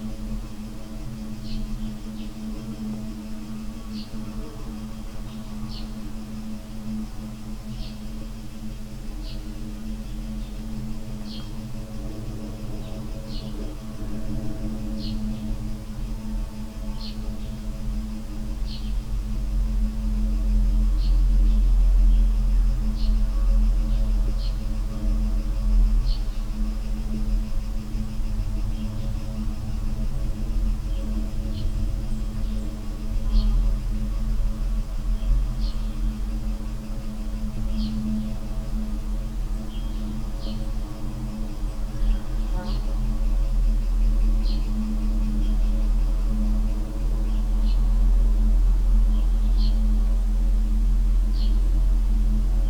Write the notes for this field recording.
summer afternoon, very hot and dry